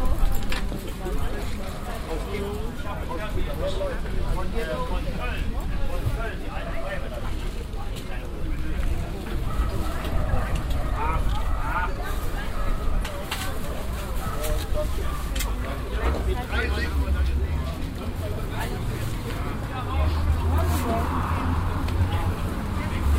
wülfrath, markt - wuelfrath, markt
a small weekly market recorded in the morning time
project: :resonanzen - neanderland soundmap nrw: social ambiences/ listen to the people - in & outdoor nearfield recordings